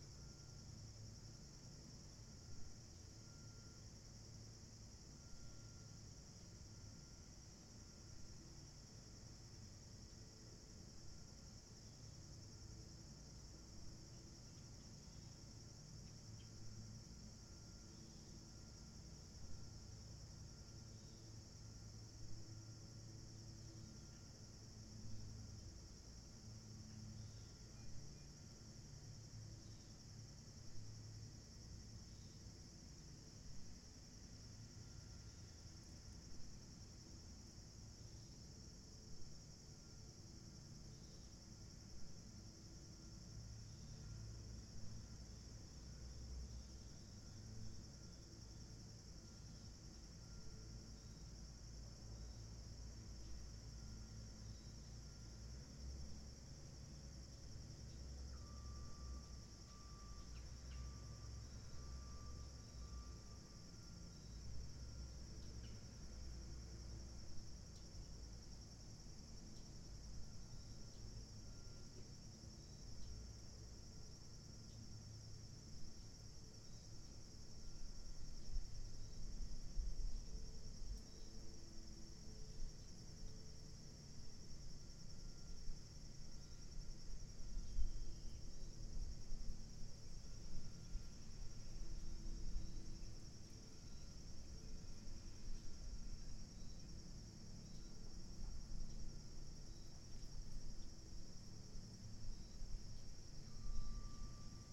The College of New Jersey, Pennington Road, Ewing Township, NJ, USA - Lake Ceva
Lake Ceva at The College of New Jersey